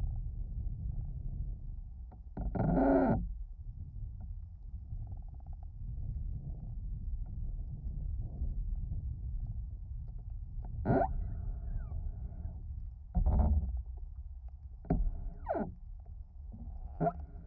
Creaking tree 2 bass vibrations and groans, Vogelsang, Zehdenick, Germany - Creaking tree internal bass vibrations and groans heard with contact mic
The contact mics are simple self made piezos, but using TritonAudio BigAmp Piezo pre-amplifiers, which are very effective. They reveal bass frequencies that previously I had no idea were there.